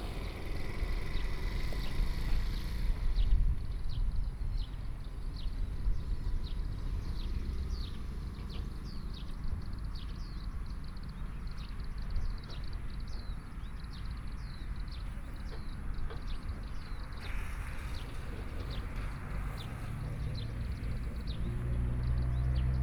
五結鄉錦眾村, Yilan County - In beware
In beware, Town, Traffic Sound, Birdsong
Sony PCM D50+ Soundman OKM II
Wujie Township, 五結防潮堤防, 22 July 2014